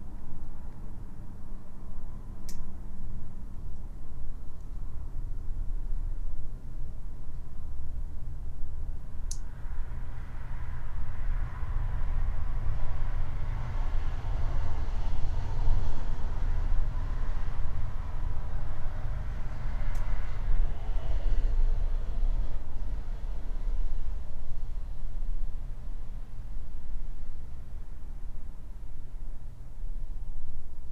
Narkūnai, Lithuania, in abandoned basement
some abandoned basement in the meadow. probably, many years ago there was homestead.